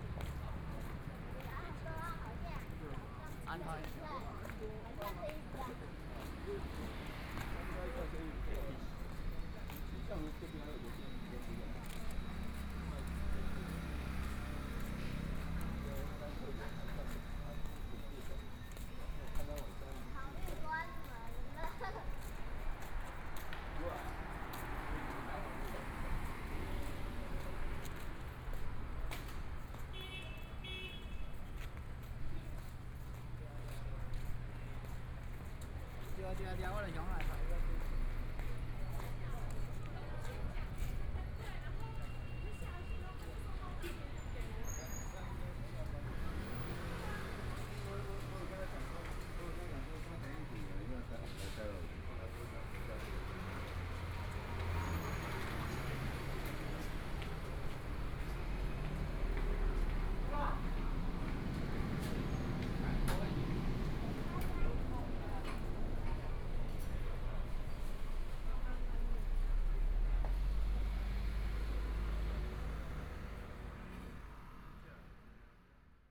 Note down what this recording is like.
walking in the Street, Environmental sounds, Traffic Sound, Walking through a variety of different kinds of shops, Binaural recordings, Zoom H4n+ Soundman OKM II